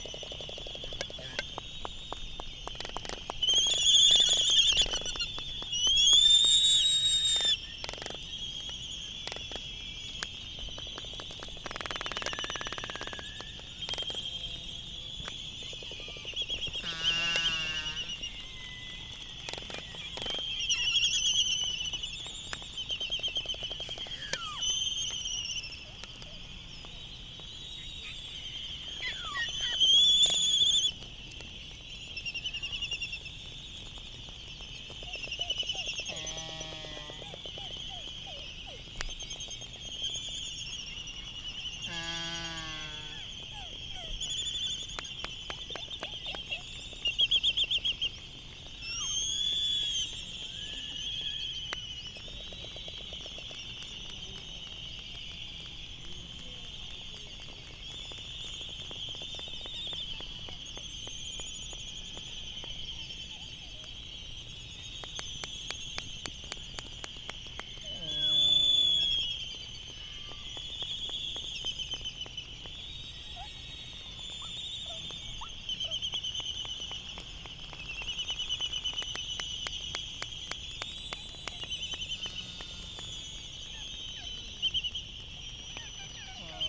United States Minor Outlying Islands - Laysan albatross dancing ...
Laysan albatross dancing ... Sand Island ... Midway Atoll ... bird calls ... canaries ... open lavalier mics on mini tripod ... background noise ...
13 March 2012, 18:57